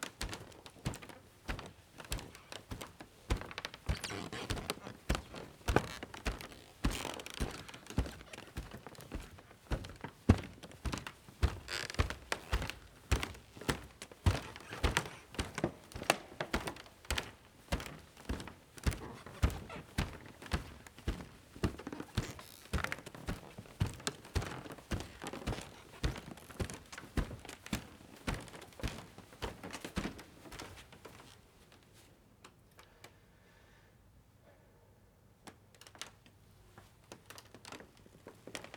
Nördliche Innenstadt, Potsdam, Germany - 2016-08-31 Alter Markt Potsdam FH Altbau Bibliothek Boden 07.15Uhr
As Peter mentions below: Creaky floor of the disused library/sports hall in the building of the University of Applied Sciences (also hosting a gallery, and the ZeM for a while), formerly the "Institut für Lehrerbildung" (GDR). I was walking over the wooden floor without shoes. Today, in April 2019, the whole building is already demolished in order to redesign Potsdam's new 'historical' inner city.
[Beyerdynamic MCE 82, Sony PCM-D100]